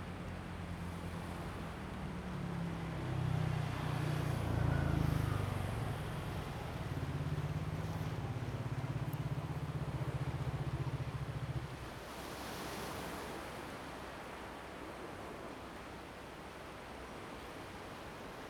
椰油村, Koto island - On the coast
On the coast, sound of the waves
Zoom H2n MS +XY